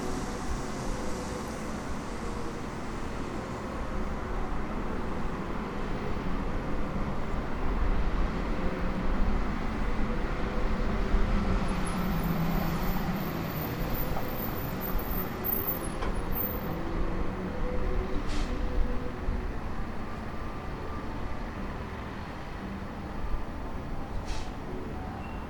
Downtown, Detroit, MI, USA - riverwalk
riverwalk, downtowm detroit
January 2011